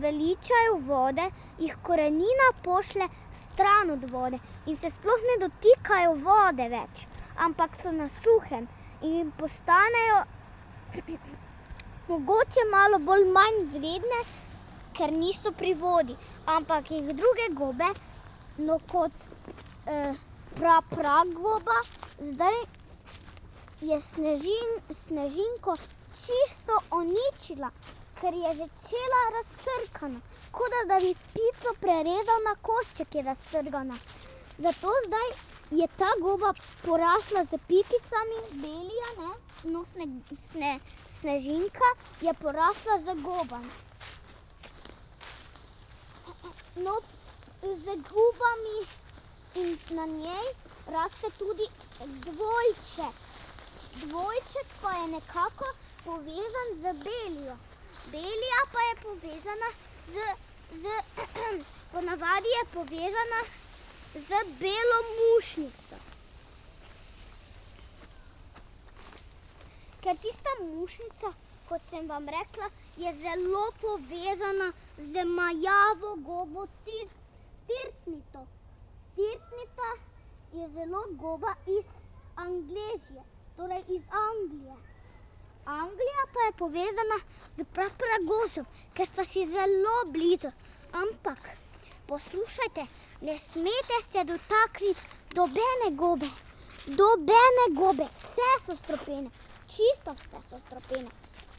Trije ribniki, Podova, Slovenia - lecture: on mushrooms
short lecture on mushrooms while walking on a path through reeds